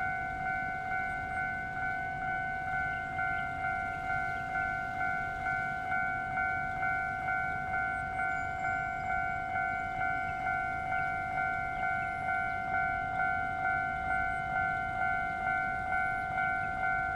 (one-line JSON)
{"title": "中興路三段, 五結鄉四結村 - Close to the track", "date": "2014-07-25 17:37:00", "description": "At railroad crossing, Close to the track, Traffic Sound, Trains traveling through\nZoom H6 MS+ Rode NT4", "latitude": "24.69", "longitude": "121.78", "altitude": "11", "timezone": "Asia/Taipei"}